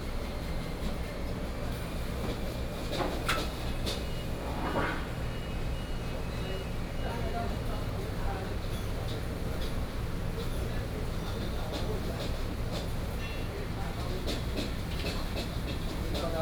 Standing next to the restaurant, Road construction Sound